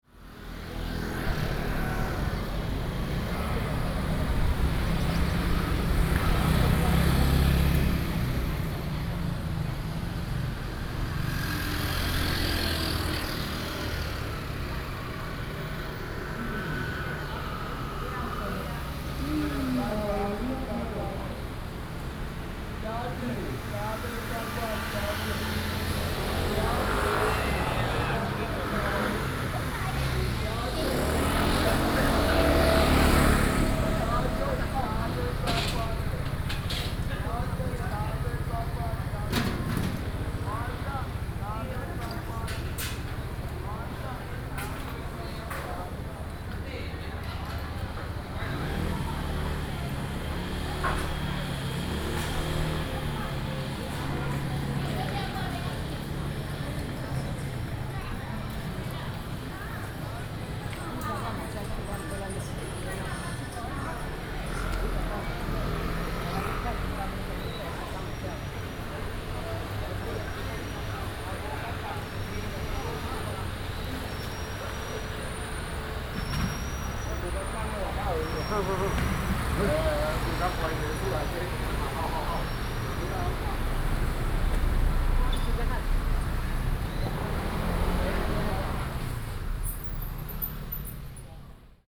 Traffic Sound, In front of the temple, On the streets of a small village
Sony PCM D50+ Soundman OKM II